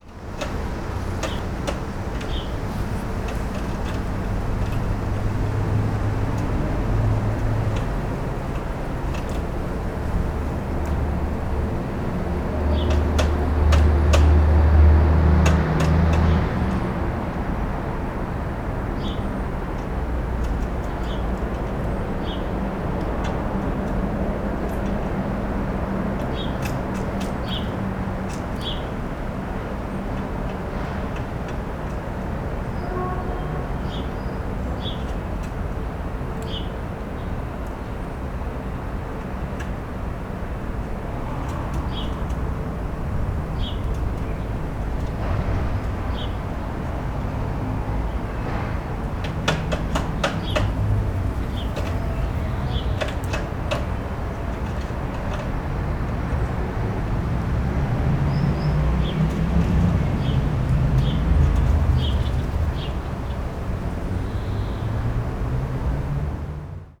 Poznan, Kraszewskiego, old zoo - magpie
a magpie sitting on a top of a wall and tapping its beak on a metal encasing on the top of the wall. Looked like it was trying to crack something on the hard surface. (roland r-07)
Poznań, Poland, 14 May